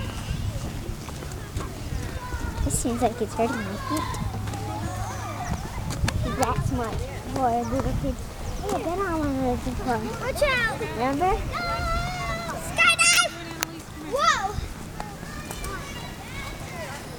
Recorded for World Listening Day 2010 by Kurt Lorenz. Recorded at Lake Wilderness Park, Maple Valley, WA. Featuring Ilaria Lorenz.